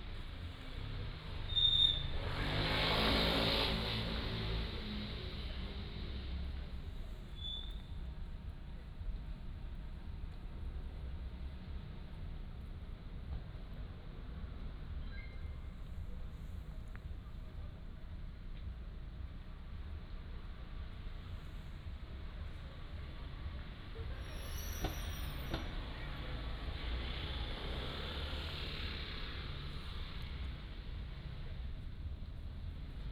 Garak-ro, Gimhae-si - In the corner of the road
In the corner of the road, Traffic Sound, Cold night
Gyeongsangnam-do, South Korea, 15 December 2014, ~20:00